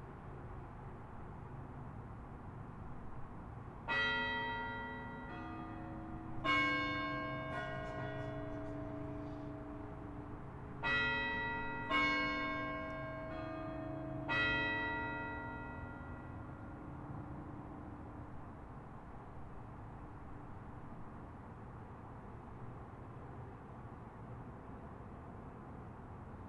Recorded on a H4N Pro Zoom Recorder, sitting on a bench at the Mills College Bell Tower (AKA El Campanil). This recording begins one minute before the bells rang at 6:30pm. In this recording, you can hear the sounds of cars driving by the tower, and a faint sound of crickets in the background.